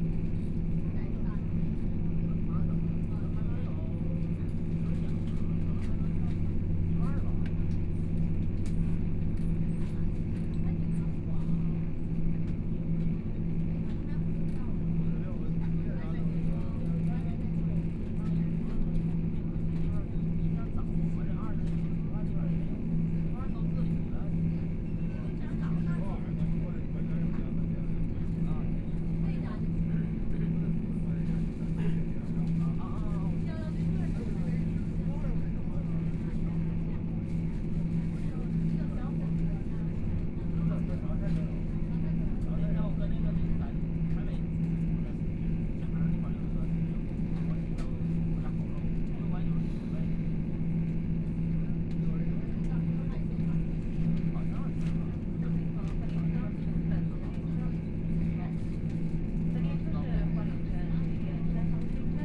Ji'an Township, Hualien County - Noise inside the train
Noise inside the train, Train voice message broadcasting, Dialogue between tourists, Mobile voice, Binaural recordings, Zoom H4n+ Soundman OKM II
Hualien County, Taiwan, 15 January